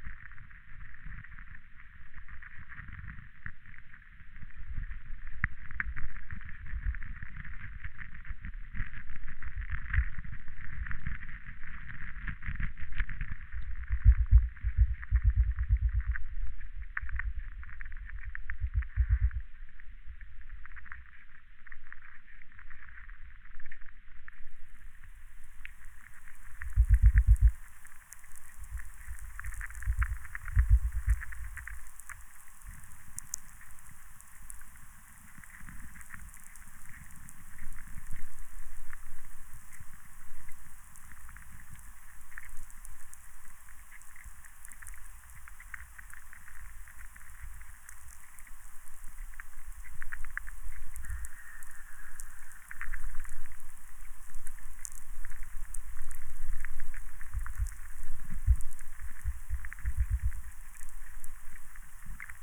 river Sventoji, Lithuania, underwater and atmospheric VLF
hidden sounds at/in river Sventoji. underwater captured with hydrophone and atmospheric electricity captured with VLF receiver